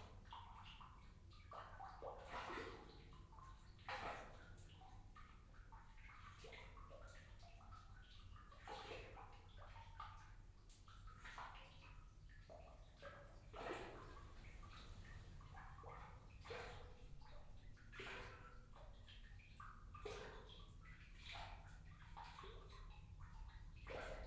Meyersche Stollen, Aarau, Schweiz - Water with train in Meyersche Stollen
Third recording of the water, this time the trains of the main station above this old tunnel are audible (binaural).